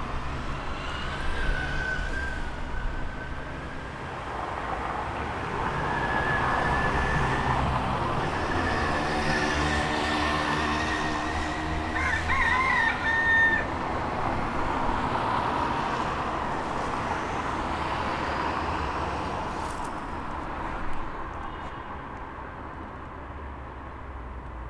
Binckhorst Mapping Project: Los Gallos. 12-02-2011/16:40h - Binckhorst Mapping Project: Los Gallos
Binckhorst Mapping Project: Los gallos